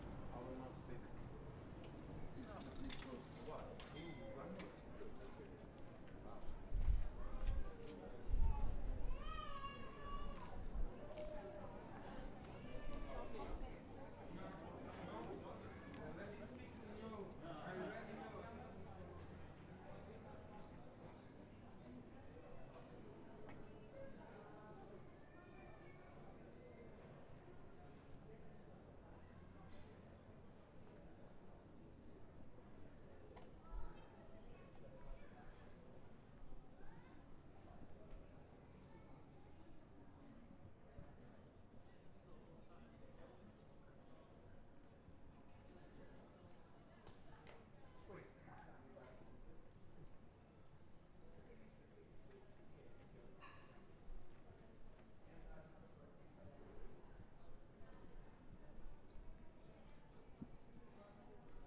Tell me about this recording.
Late afternoon walk around old Evora Patéo de S. Miguel>> Templo>>P. Giraldo >> R.5 Outubo>> Sé